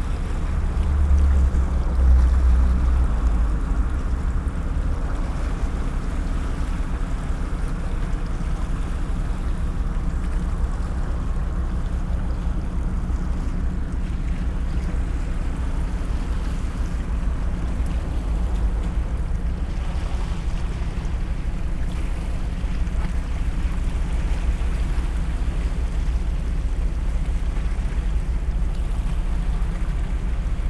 Muggia Triest, Italy - ship passing, engine drone
drone of a departing ship near Muggia.
(SD702, AT BP4025)
Muggia, Trieste, Italy